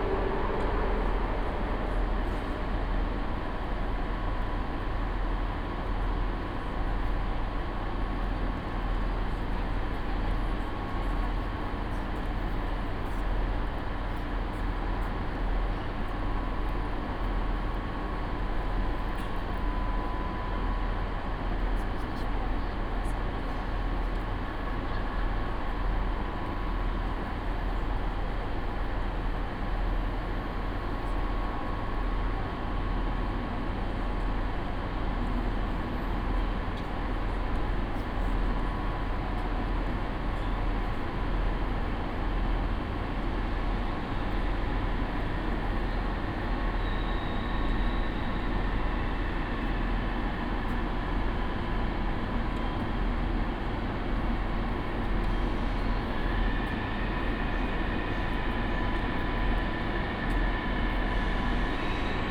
Dresden Hauptbahnhof: Germany - waiting for IC from Prague
station ambience from elevated track 17, IC/ICE trains arrive and depart here. my train is 45min late.